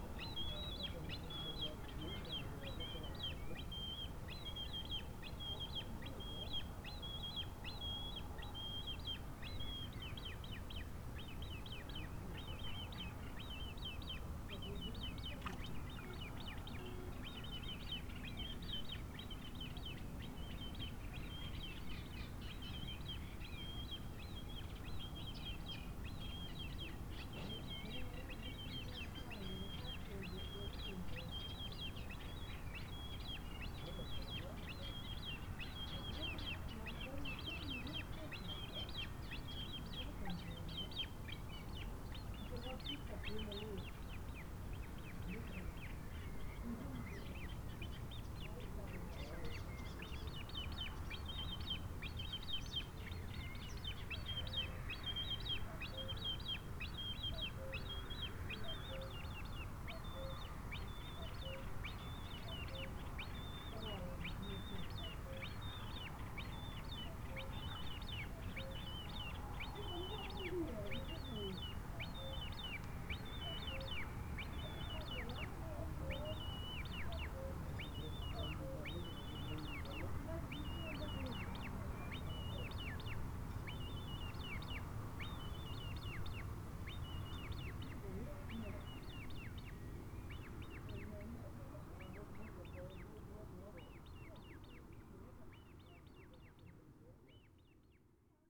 workum, het zool: marina, berth h - the city, the country & me: marina
young coot and cuckoo in the distance
the city, the country & me: june 26, 2011